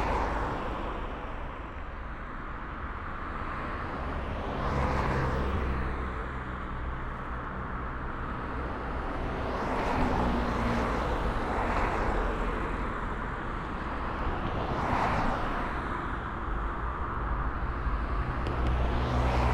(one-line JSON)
{"title": "Rijeka, Croatia - Binaural Traffic", "date": "2017-01-19 21:34:00", "description": "windshield EM172 + PCM-D50", "latitude": "45.33", "longitude": "14.42", "altitude": "5", "timezone": "GMT+1"}